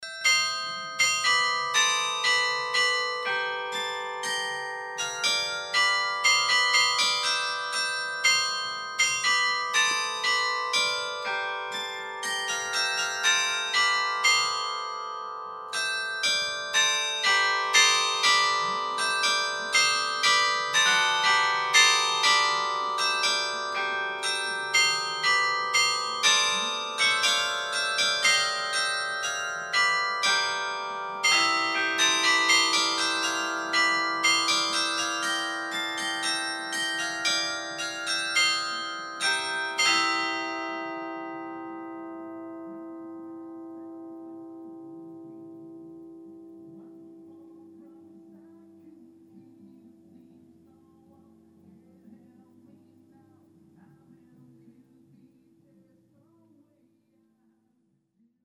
Recorded inside the bell tower a first example of the bell ensemble playing a melody.
Vianden, Glockenturm
Aufgenommen im Glockenturm: ein erstes Beispiel einer Glockenmelodie.
Vianden, clocher
Enregistré à l’intérieur du clocher pour un premier exemple du carillon dans son ensemble.
Project - Klangraum Our - topographic field recordings, sound objects and social ambiences
Vianden, Luxembourg